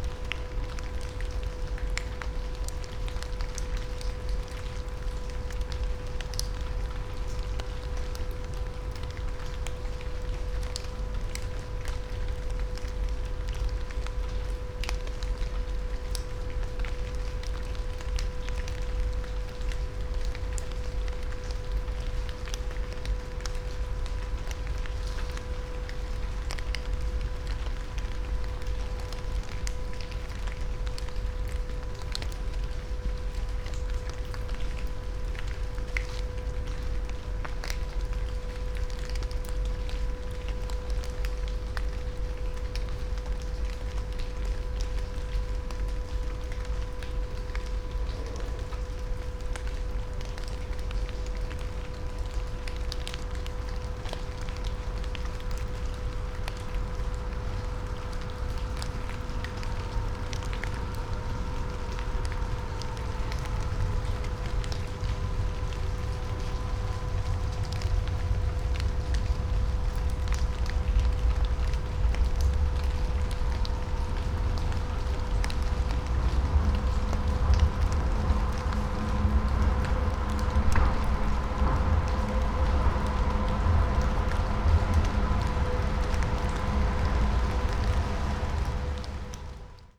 Franzosenweg, Halle (Saale), Deutschland - rain on leaves and drone
Halle, Franzosenweg, rainy Monday night, rain falls on autumn leaves, a seemingly electrical drone is all over the place, could't locate it.
(Sony PCM D50, Primo EM172)